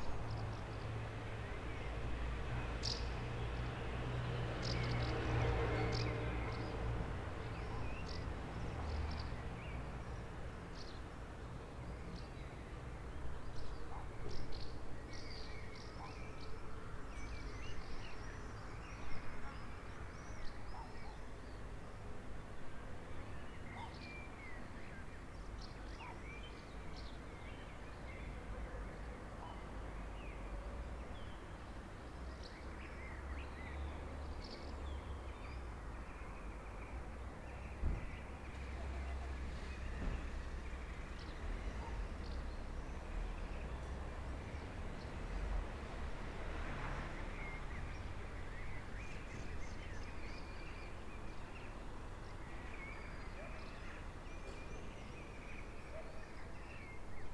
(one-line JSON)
{"title": "Perugia, Italia - a quite terrace", "date": "2014-05-21 18:14:00", "description": "a quite terrace, birds and traffic from long distance\n[XY: smk-h8k -> fr2le]", "latitude": "43.11", "longitude": "12.39", "altitude": "456", "timezone": "Europe/Rome"}